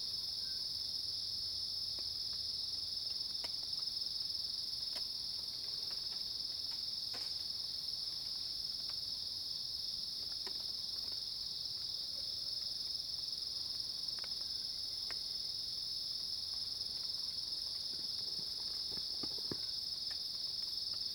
{"title": "Nantou County, Taiwan - Insects, Birds and Cicadas", "date": "2016-09-19 06:18:00", "description": "Insects called, Birds call, Cicadas cries, Facing the woods\nZoom H2n MS+XY", "latitude": "23.93", "longitude": "120.89", "altitude": "777", "timezone": "Asia/Taipei"}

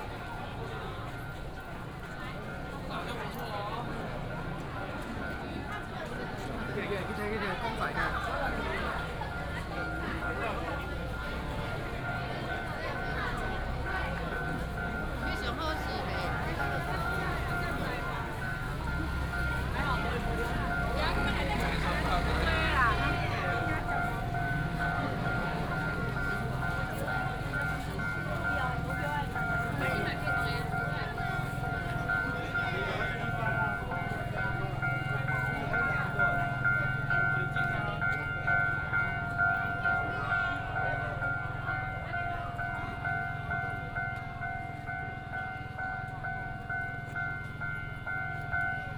{"title": "Baixi, Tongxiao Township - Walking on the road", "date": "2017-03-09 09:58:00", "description": "Walking on the road, Matsu Pilgrimage Procession, railway level crossing, The train passes by", "latitude": "24.57", "longitude": "120.71", "altitude": "14", "timezone": "Asia/Taipei"}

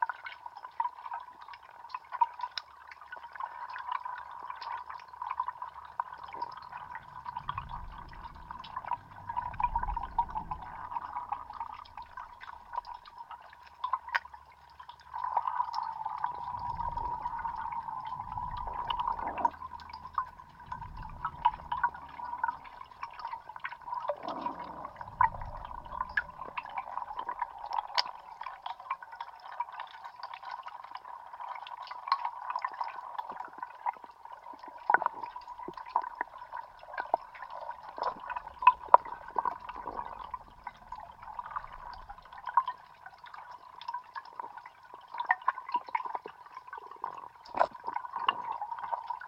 {"title": "Utena, Lithuania, another hydrophone", "date": "2022-05-06 18:05:00", "description": "the dam was lowered for repair. hydrophone in the water", "latitude": "55.52", "longitude": "25.65", "altitude": "123", "timezone": "Europe/Vilnius"}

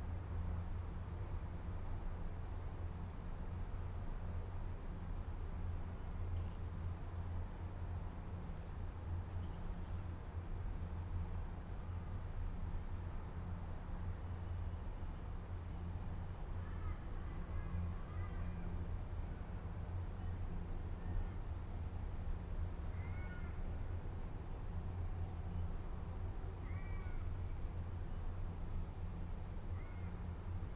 {"title": "My backyard, Barton, Canberra", "date": "2010-07-18 08:10:00", "description": "World Listening Day. There is a steady background noise of distant traffic and then you can hear ravens, a magpie, a blue wren, a magpie lark, crested pigeons flying - and one of my chickens.", "latitude": "-35.31", "longitude": "149.14", "altitude": "569", "timezone": "Australia/Canberra"}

